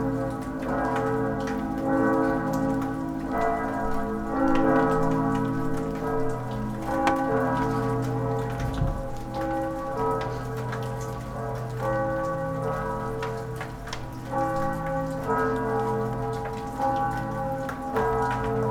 sunday morning church bells, drip drop of melting snow. for whatever reason, the bells are way louder and closer than usual. (Sony PCM D50 XY)